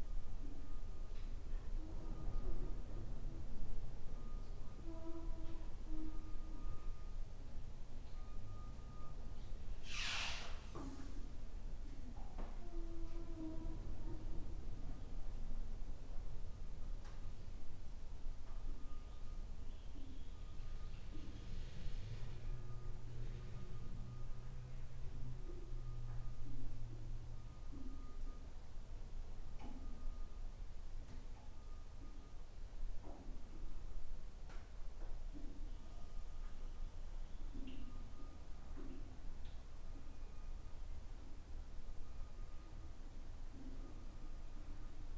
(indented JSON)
{
  "title": "중도 부두 Jung Island disused wharf gusty day March2020",
  "date": "2020-03-21 14:00:00",
  "description": "중도 부두 (中島) Jung Island disused wharf_gusty day_March 2020...a quiet place, small sounds and sounds from a distance are audible....sounds that arrive under their own power or blown on the wind....listening at open areas on the wharf, and cavities (disused ferry interior, cavities in the wharf structure, a clay jar)….in order of appearance…",
  "latitude": "37.88",
  "longitude": "127.70",
  "altitude": "76",
  "timezone": "Asia/Seoul"
}